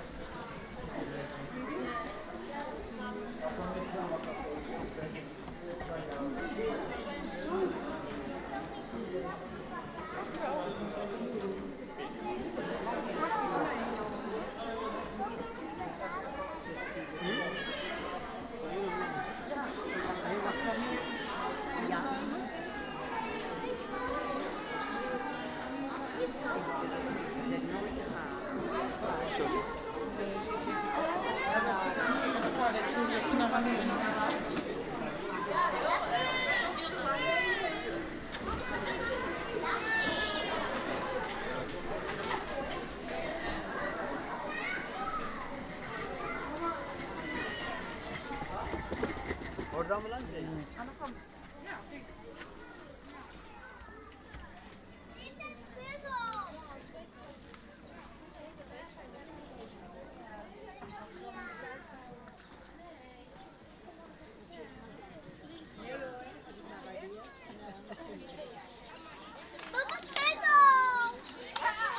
It was way too crowded. And it was too hot for the animals to do anything at all. So I thought it was a good idea to record the visitors around me while walking through the zoo.
Het was te druk. En het was te heet voor de dieren om ook maar iets te doen. Het leek me een goed om dan maar de bezoekers op te nemen terwijl ik door het park liep.
Binaural recording
Rotterdam, Netherlands, 22 July 2016